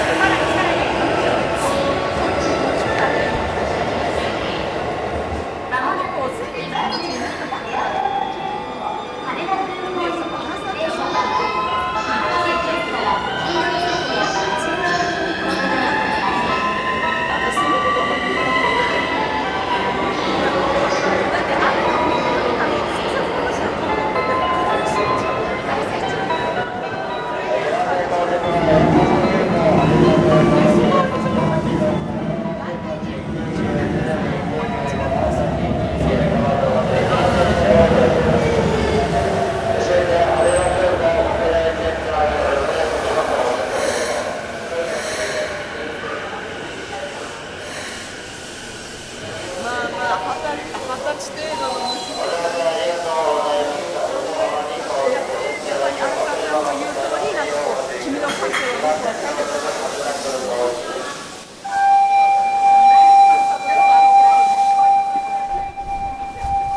Akihabara Tokyo - Shopping mall.
Walking through a shopping mall in Akihabara - Electric Town.
Recorded with Olympus DM-550